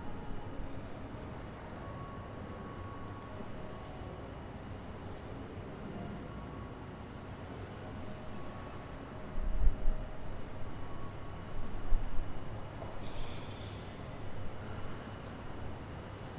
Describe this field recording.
Getting Wasted, ‘‘when wasting time you are exposed to time and its existence. When doing the opposite which is doing something ‘useful’ you tend to forget about time and its existence. ........ Here wasting time corresponds with wasting life. Getting wasted is also an escape from the reality of time from this perspective.’’